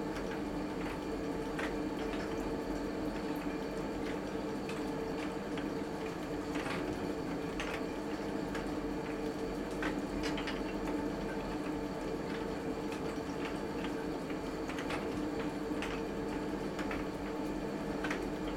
Rue du Moulin, Lugy, France - Moulin de Lugy - Intérieur
Moulin de Lugy - côte d'Opale
Roue Hydraulique
ambiance intérieure.
France métropolitaine, France